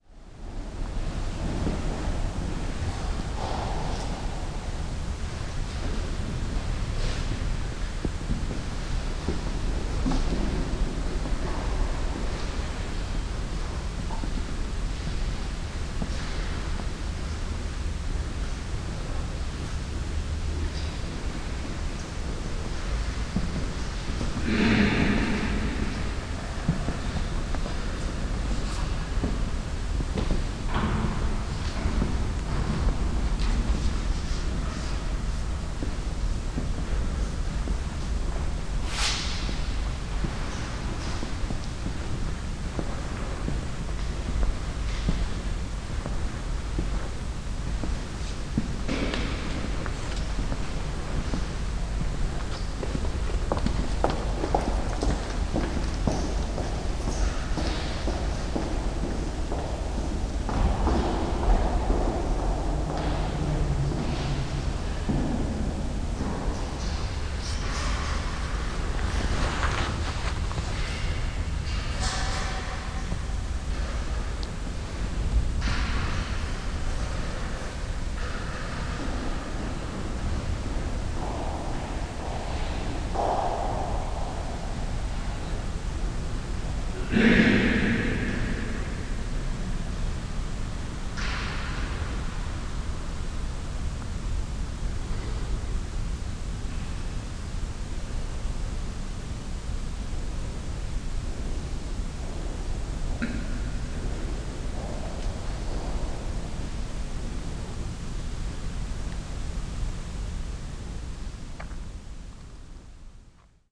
essen, hohe domkirche, inside church
inside the church hall. Some steps and silent whispers of the visitors, a door in the overall silence of the place.
Projekt - Stadtklang//: Hörorte - topographic field recordings and social ambiences
Kulturpfad Essen - topographic field recordings and social ambiences
Essen, Germany, 31 May 2011